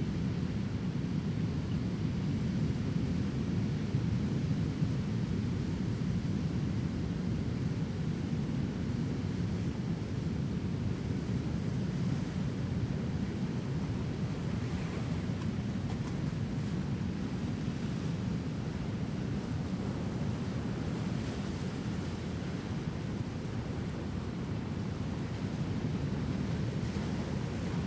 Humboldt County, CA, USA - PETROLIA BEACH, THANKSGIVING DAY 2013
roar of Pacific ocean on the beach in Petrolia, Ca
28 November, 14:57